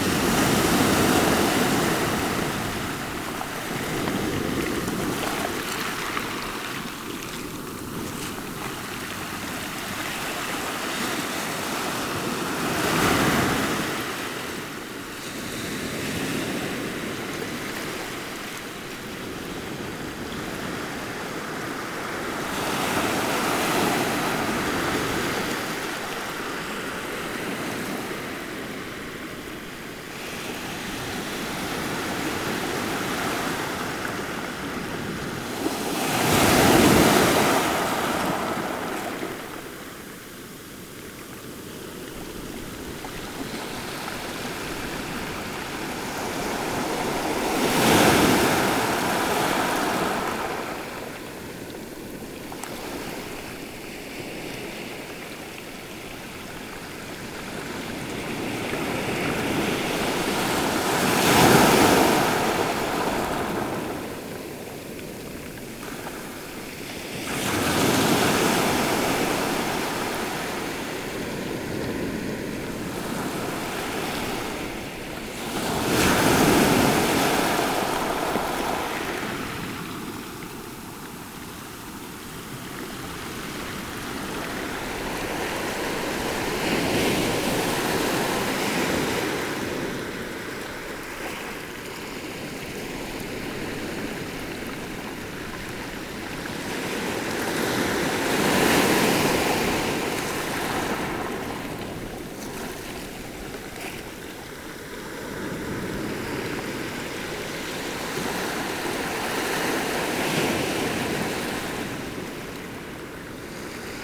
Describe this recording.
The sea, beginning to reach the low tide, on the big Kora karola beach.